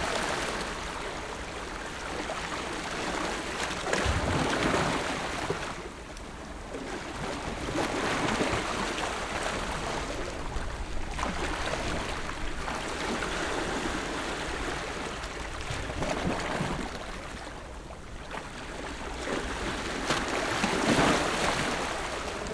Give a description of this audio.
Sound of the waves, Very hot weather, Small port, Pat tide dock, Zoom H6 XY +Rode NT4